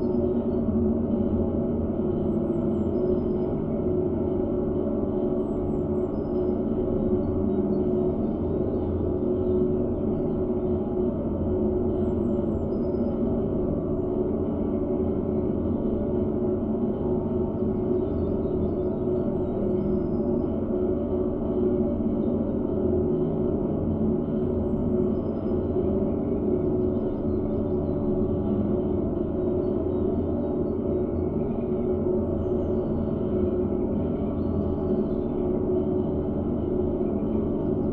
Königsheide, Berlin, Deutschland - well, Brunnen 18
Berlin, Königsheide forest, one in a row of drinking water wells, now suspended
(Sony PCM D50, DIY contact microphones)
30 April